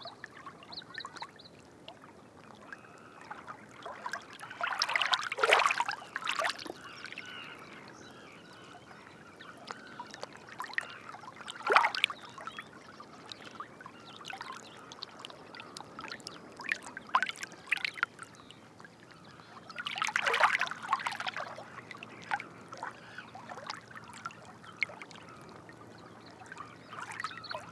Loix, France - Salt marshes
Between the salt marshes, sound of the lapping. At the backyard : Pied Avocet, Little Egret, Black-winged Stilt and Zitting Cisticola.
20 May 2018, ~17:00